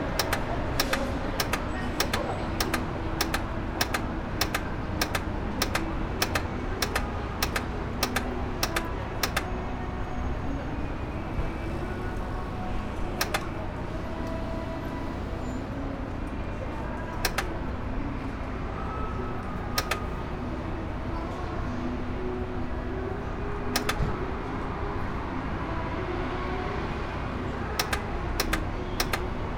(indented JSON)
{
  "title": "Osaka northern downtown, Umeda district, in front of Hanshin deparment store - jammed bike rental machine",
  "date": "2013-03-31 18:20:00",
  "description": "jammed bike rental machine clicking as it's trying to release the bike",
  "latitude": "34.70",
  "longitude": "135.50",
  "altitude": "19",
  "timezone": "Asia/Tokyo"
}